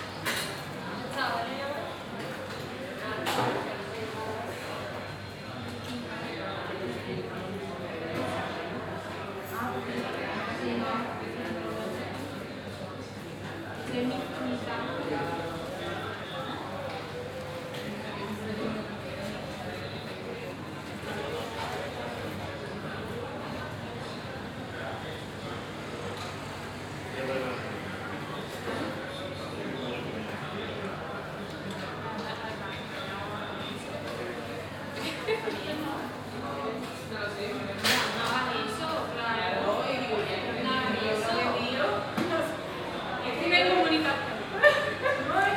Sevilla, Provinz Sevilla, Spanien - Sevilla - Pizzeria Uoni
At the Pizzeria Uoni. The sound of the location while people order pizza slices and drinks.
international city sounds - topographic field recordings and social ambiences
Sevilla, Spain, 9 October 2016